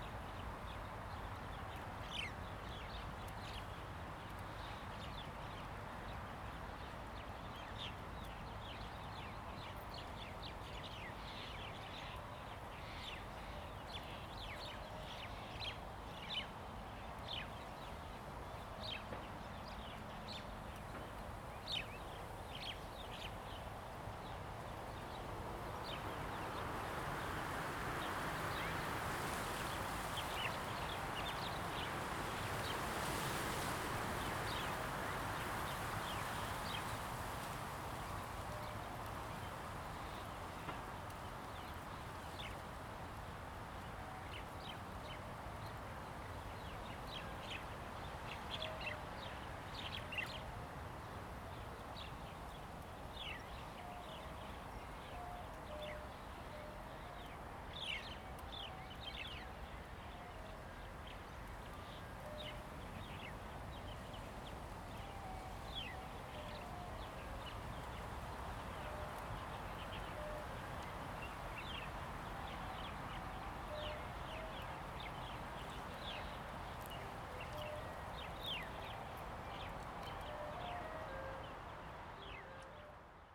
Jinning Township, Kinmen County - Birds singing and Wind
Birds singing, Wind, Distance came the sound of music garbage truck
Zoom H2n MS+XY